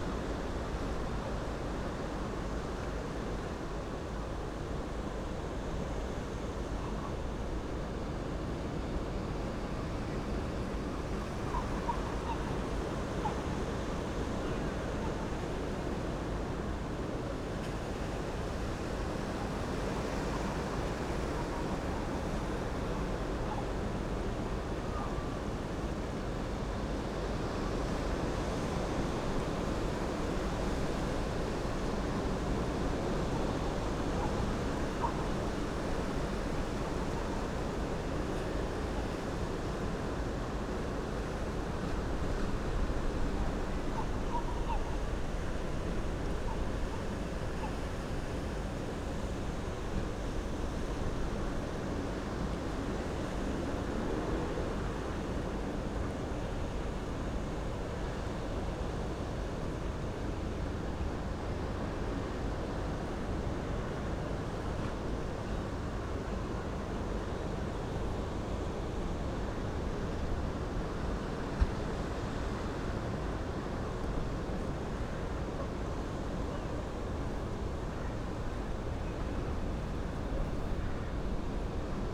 West Lighthouse, Battery Parade, UK - West Pier Lighthouse ...
West Pier Lighthouse Whitby ... lavalier mics clipped to bag ... soundscape from the top of the lighthouse ... student protest about climate change in the distance ...